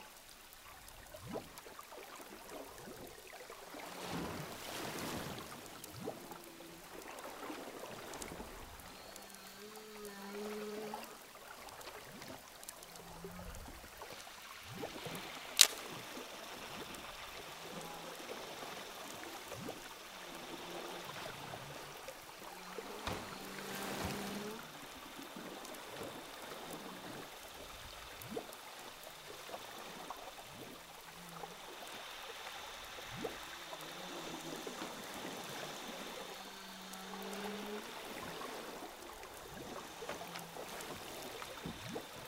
prise de son pour le tournage de signature au large de saint gilles ile de la reunion